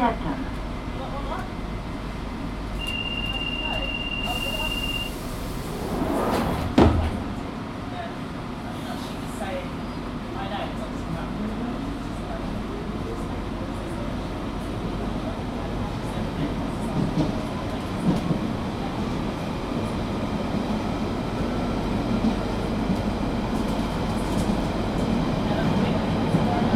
South Woodford, London, UK - Central Line - South Woodford station to Woodford station.
Central Line underground train (tube train) travelling between South Woodford station and Woodford station. Driver announcements etc.